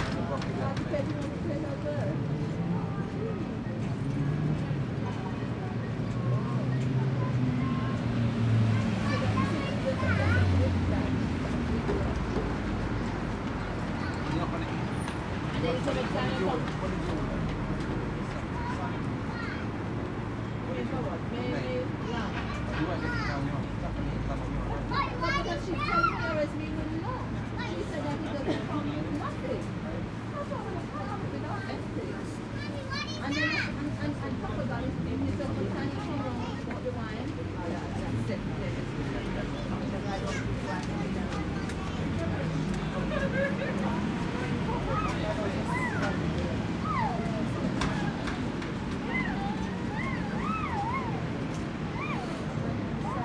Brixton, London, UK - You Get to Listen to My Music with an American Flag on It
Recorded on the street and in a bus with a pair of DPA 4060s and a Marantz PMD661